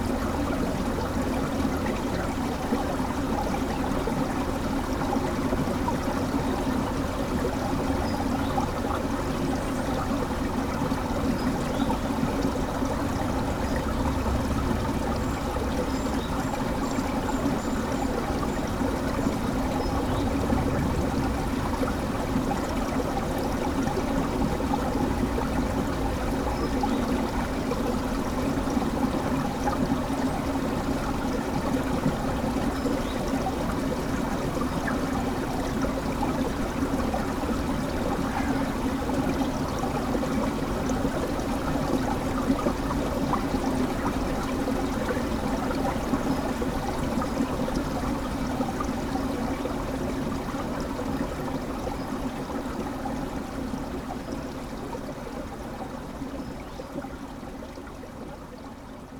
burg/wupper: bach - the city, the country & me: frozen creek
the city, the country & me: february 8, 2012